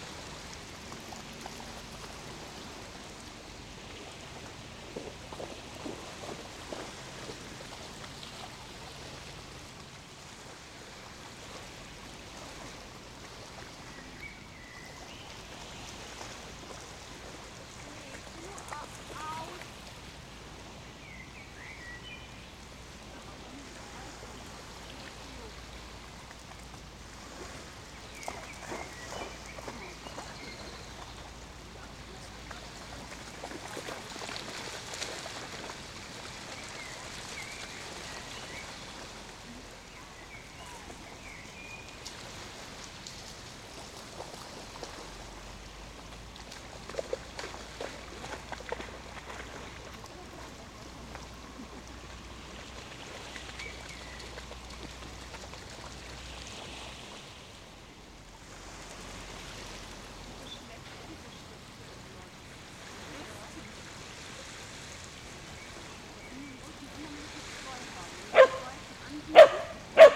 Am Molenkopf, Köln, Deutschland - walking the dog
In the Cranach Wäldchen near the shore of the Rhein, people talking, dog plays in the water, barking, wind in the trees.
Köln, Germany, 15 June 2000, 15:00